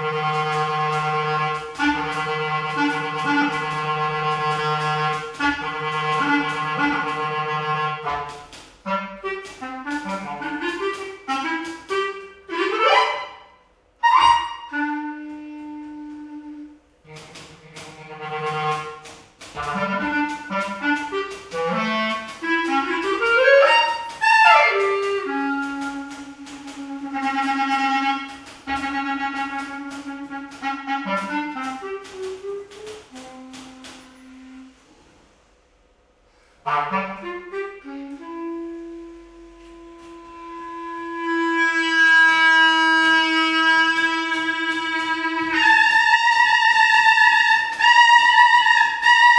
Centralna Postaja, Koroška cesta, Maribor - sonic fragment from performance Bič božji
Maribor, Slovenia